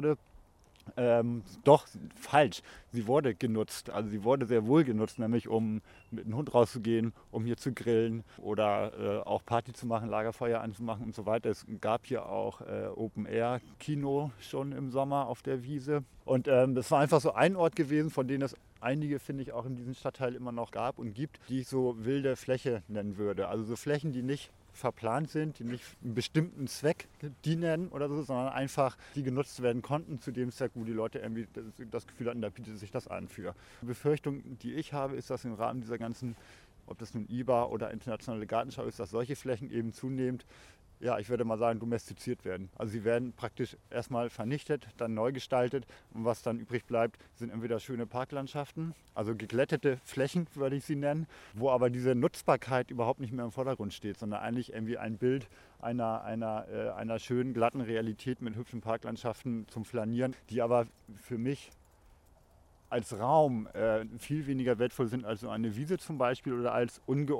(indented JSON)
{
  "description": "ungeordnete und glatte flächen im öffentlichen raum. eine grosse rasenfläche ist zu einer baustelle geworden...",
  "latitude": "53.52",
  "longitude": "9.98",
  "altitude": "1",
  "timezone": "Europe/Berlin"
}